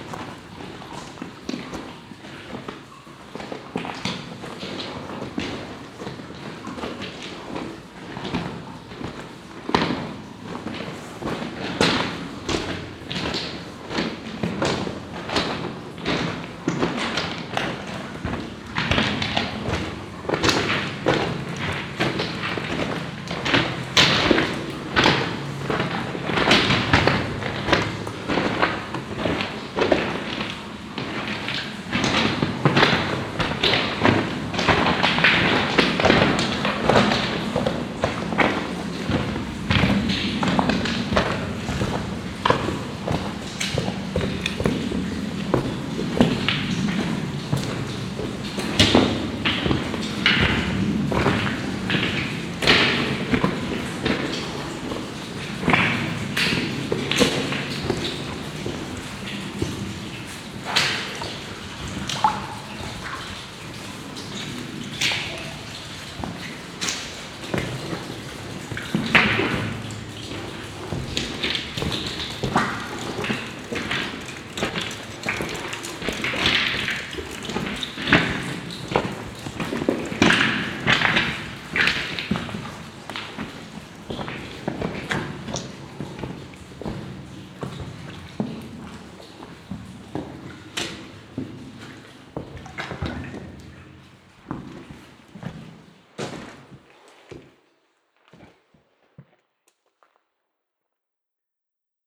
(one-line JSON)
{"title": "Ulflingen, Luxemburg - Walk out of the tunnel", "date": "2012-08-07 15:06:00", "description": "Der Klang von Schritten auf nassem und steinigem Untergrund beim Verlassen des Tunnels.\nThe sound of steps on wet and stony grounds while walking out of the tunnel.", "latitude": "50.16", "longitude": "6.04", "altitude": "541", "timezone": "Europe/Luxembourg"}